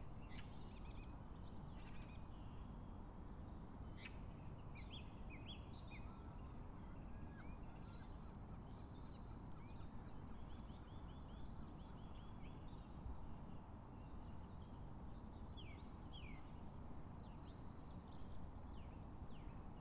Dog walk through the filter beds capturing the sounds birdsong and occasional industry
England, United Kingdom, 13 January 2022